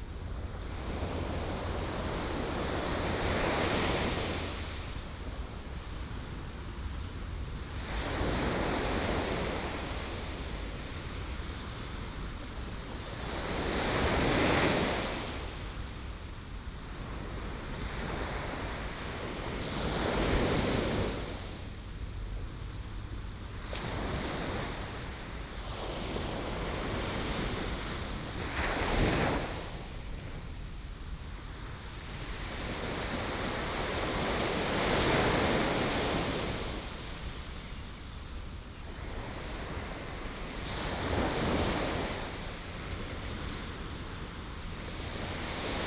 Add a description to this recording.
Waterfront Park, At the beach, Sound of the waves, Aircraft flying through